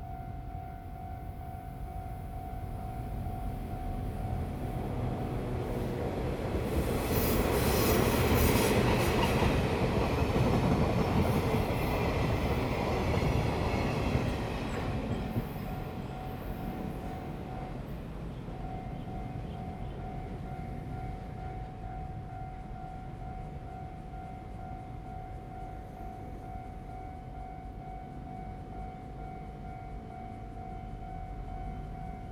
中正二路, Yingge Dist., New Taipei City - the train runs through
in the railway, traffic sound, The train runs through
Zoom H2n MS+XY